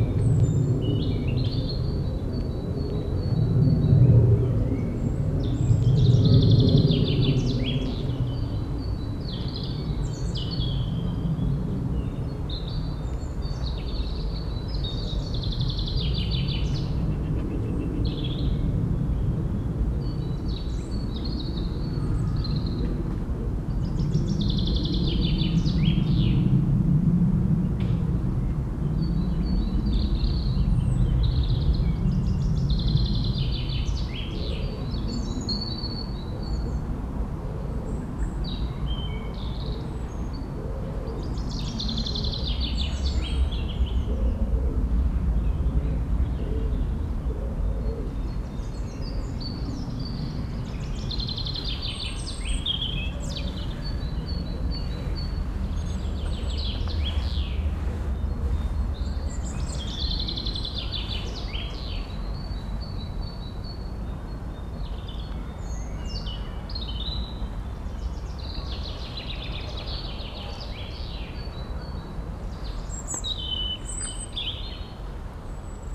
Borbeck - Mitte, Essen, Deutschland - essen, schloß borbeck, path under trees
Im Schloßpark Borbeck auf einem Weg unter Bäumen. Die Klänge der Vögel an einem sonnigen, leicht windigem Frühlingstag. Ein tiefsonoriges Flugzeug kreuzt den Himmel.
In the park of Schloß Borbeck on a path under trees. The sounds of the birds at a sunny but windy spring day. A plane is crossing the sky.
Projekt - Stadtklang//: Hörorte - topographic field recordings and social ambiences